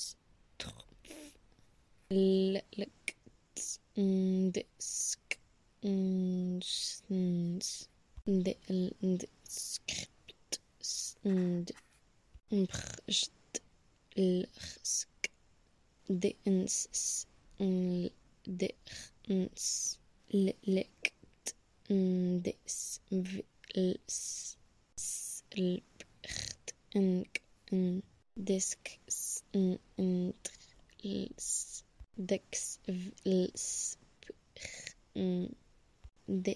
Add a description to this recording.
El cuestionamiento y el secuestro del lenguaje son los temas que se abordan a través de la instalación de esta Deads Drops de sonido. Entre la ciudad de Rennes y Barcelona los archivos sonoros contenidos en estas Dead Drops constituyen un medio de comunicación mediante el uso de un lenguaje abstracto, incluso de un nuevo lenguaje, como Isidore Isou en su obra «tratado de valiente y de eternidad» O Guy Debord explorando el secuestro cerca de los letristas. En la dead drop se encuentra la elocución de las consonantes de la descripción del proyecto. Esto lleva a una discusión entre las dos ciudades mediante un diálogo de puesta en abismo a la sonoridad absurda que apela a la noción de repetición y de absurdo.